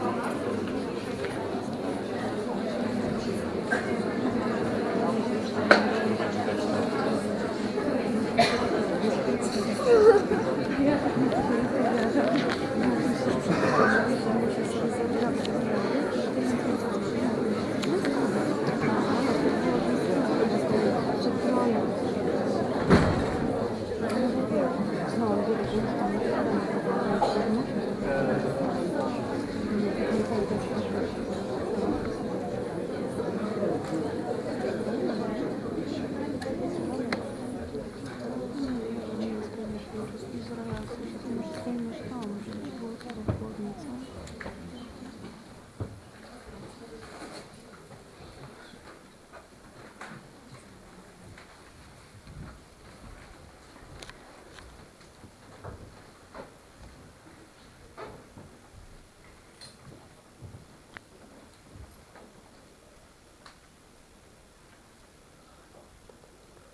Teatr Polski, Szczecin, Poland
The beginning of a strange performance.